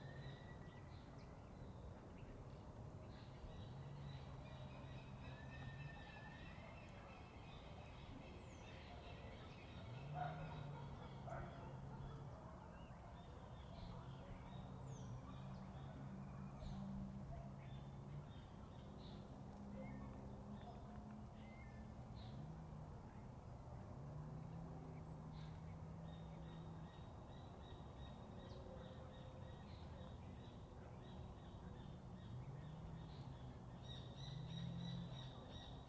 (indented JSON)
{
  "title": "Camino de Hueso, Mercedes, Buenos Aires, Argentina - Del Campo a la Ruta 1",
  "date": "2018-06-17 17:20:00",
  "description": "Recorriendo el Camino de Hueso, desde los límites rurales de Mercedes hasta la Ruta Nacional 5",
  "latitude": "-34.71",
  "longitude": "-59.44",
  "altitude": "44",
  "timezone": "America/Argentina/Buenos_Aires"
}